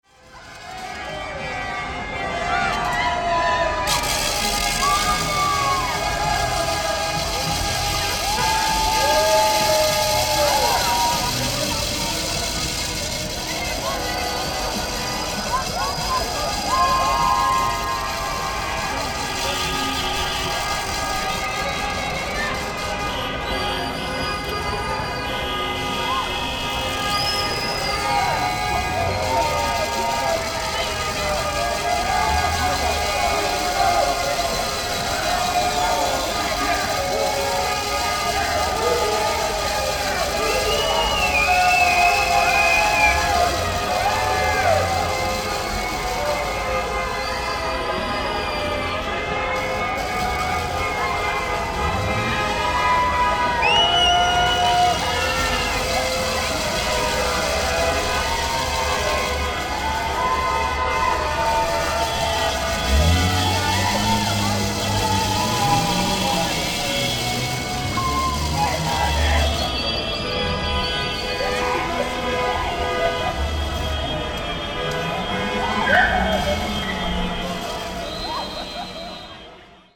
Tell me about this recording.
15.06.2008, 23:45, Turkey has won 3:2 over the Czechs, fans celebrating in the streets at night